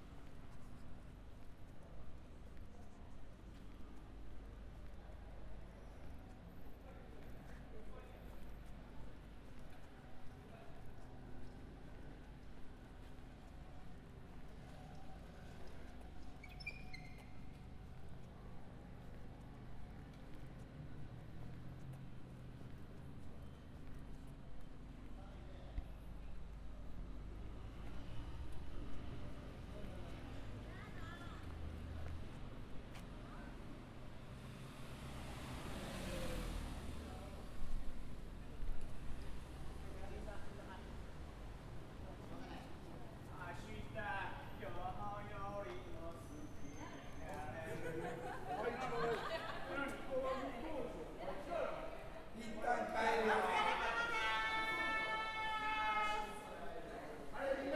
This recording was taken while strolling around Koenji in the evening.